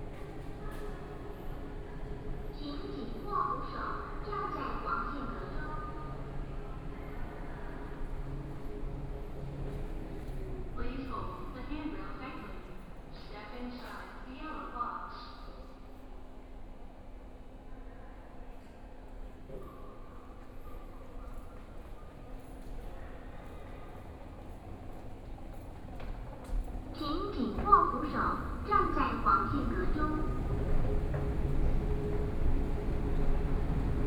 Kaohsiung International Airport Station, Taiwan - In the underpass
Walking in the station underpass